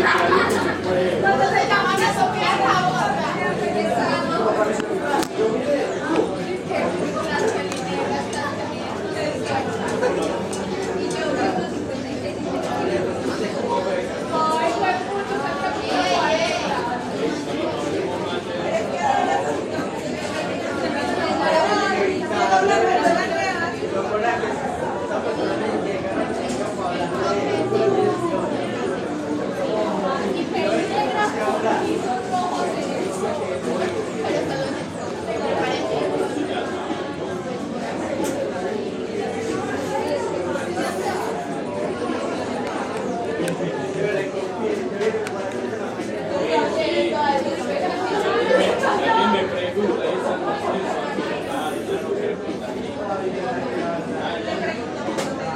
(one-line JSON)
{"title": "Cra 88 con Cll, Medellín, Antioquia, Colombia - Ambiente de cubículos del bloque 10 Universidad de Medellin.", "date": "2021-09-27 11:38:00", "description": "Sonido ambiente de cubículos del bloque 10 de la Universidad de Medellín en la Facultad de Comunicación, se escuchan voces, risas y gritos.\nCoordenadas: 6°13'56.8\"N+75°36'44.8\"W\nSonido tónico: voces hablando.\nSeñales sonoras: risas, gritos e insultos.\nGrabado a la altura de 1.20 metros\nTiempo de audio: 3 minutos con 39 segundos.\nGrabado por Stiven López, Isabel Mendoza, Juan José González y Manuela Gallego con micrófono de celular estéreo.", "latitude": "6.23", "longitude": "-75.61", "altitude": "1578", "timezone": "America/Bogota"}